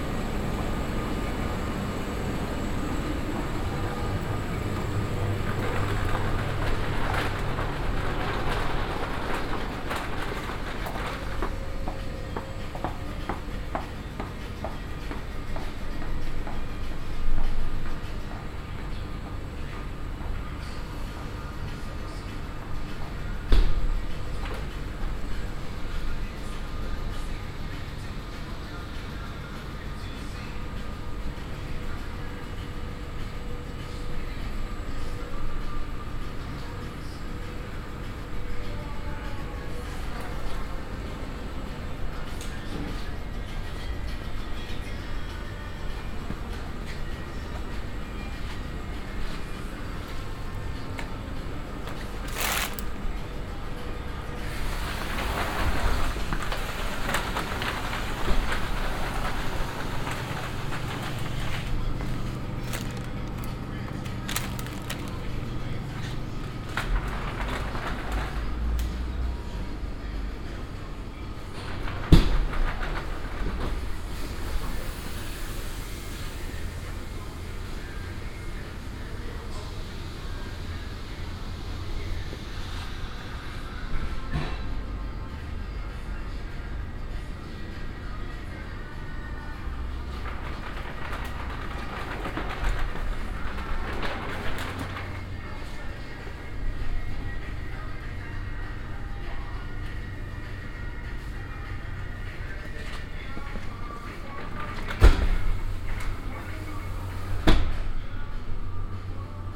marnach, shopping center
Inside a shopping center. The opening of the automatic door, a child on an electric toy, the beeping of the counter, the sound of a paper bag, the opening and closing of a bread box, some background radio music, pneumatic air, the hum of the ventilation, the rolling of a plastic shopping cart, a french announcement, the electric buzz of the ice fridges, steps on stone floor.
Marnach, Einkaufszentrum
In einem Einkaufszentrum. Das Öffnen der automatischen Tür, ein Kind auf einem elektrischen Spielzeug, das Piepsen der Schalter, das Geräusch von einer Papiertüte, das Öffnen und Schließen einer Brotdose, etwas Radiomusik im Hintergrund, Druckluft, das Brummen der Lüftung, das Rollen von einem Einkaufswagen aus Plastik, eine französische Durchsage, das elektrische Summen der Eisschränke, Schritte auf dem Steinboden.
Marnach, centre commercial
A l’intérieur d’un centre commercial.
Marnach, Luxembourg, 17 September 2011